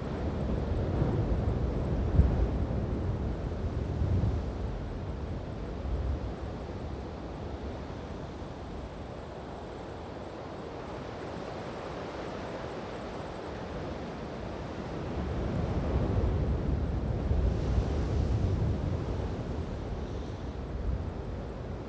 Ana'ana Point, Tamakautoga, Niue - Ana'ana Point Atmos